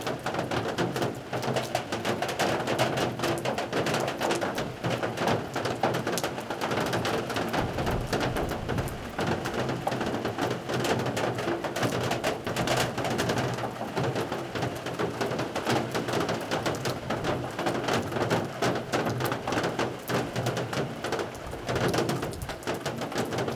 Urban, Slovenia - rain on metal porch roof
Sv. Urban church, rain and wind, rain drops on metal porch roof
(PCM D-50)